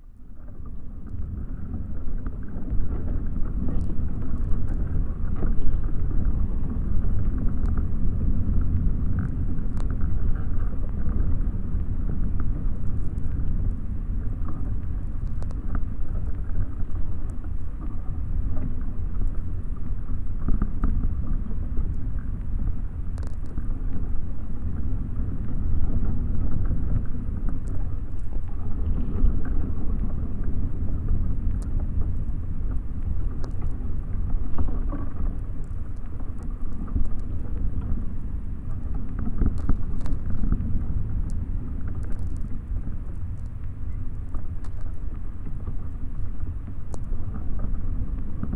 Unnamed Road, Lithuania, bush and VLF
very strong wind. contact microphones placed amongs the trunks of the bush. at the same time I am recording atmospheric VLF emissions
Utenos apskritis, Lietuva, 30 May 2020, ~17:00